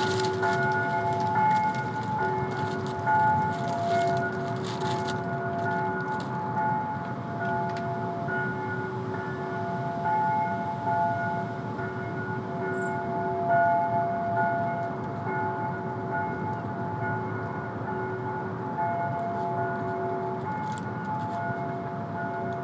Wine Hill, Przemyśl, Poland - (873) Distant bells
Recording made from a hill: distant bells play along with rustling leftovers from a construction site.
AB stereo recording (29cm) made with Sennheiser MKH 8020 on Sound Devices MixPre-6 II.